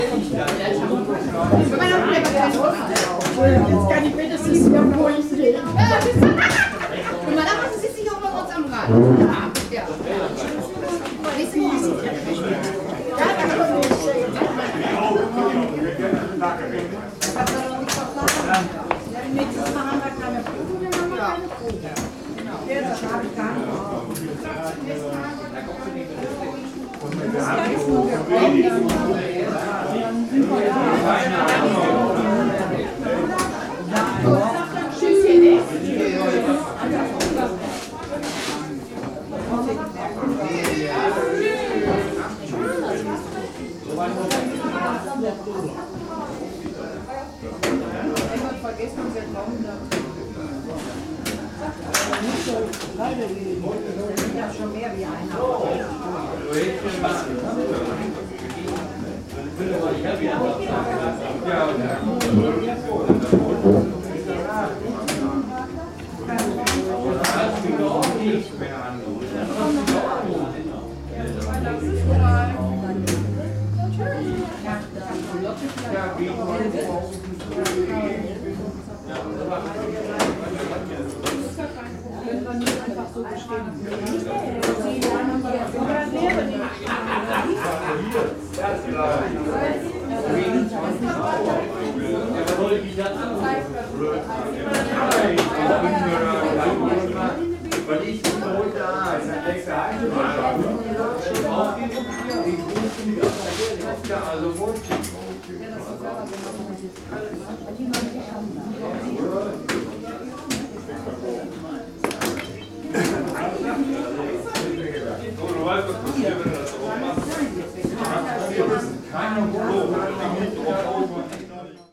Essen-Karnap, Deutschland - Bürgerhaus
Bürgerhaus, Karnaper Str. 126, 45329 Essen